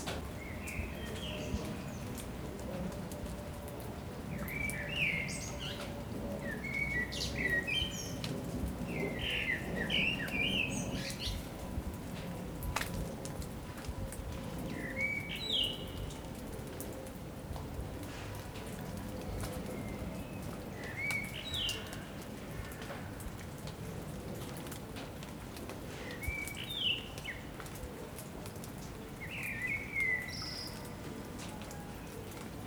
rue de Belleville, Paris, France (J-Y Leloup) - Sparse rain & songbird solo in a Paris backyard
The rain ends at the end of the day, around 6PM during summer 2014, and a bird starts to sing, in a peaceful ambiance in an upper Belleville backyard in Paris. Raindrops keep falling on metal and glass. Distant chuch bell.
30 June 2014